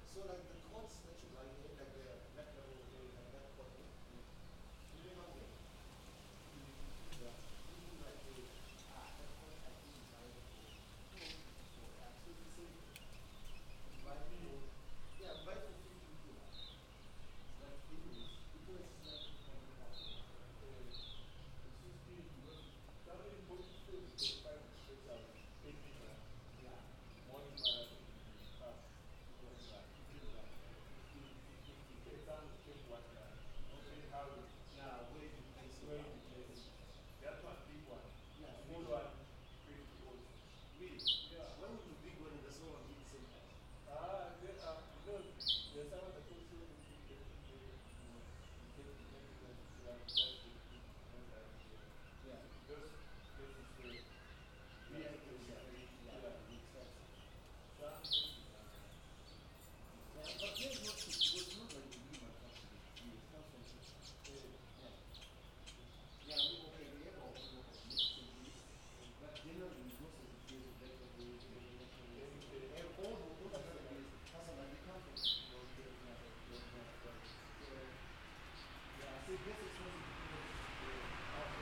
Melville, Johannesburg, South Africa - Pre-dawn to dawn at The Wedgwood in Melville, Johannesburg
While waiting to go to Limpopo, South Africa to stay in a reserve for 2 weeks as part of Francisco Lopez's & James Webb's 'Sonic Mmabolela' residency I have been staying at the Wedgwood in Johannesburg. On my first night there I was exhausted from not getting any sleep on the 15 hour flight from Sydney, Australia so I went to bed at 8pm and woke up at 4am. And since I was up early I thought I would record the dawn chorus outside my room. I think it is mainly some species of weaver bird that is calling with some ravens in the background occasionally. I think!
The recording stars when it was pitch black and ends after the light as emerged.
Recorded with a pair of Audio Technica AT4022's and an Audio Technica BP4025 into a Tascam DR-680, with the two different mic set ups about a metre apart.
15 November 2014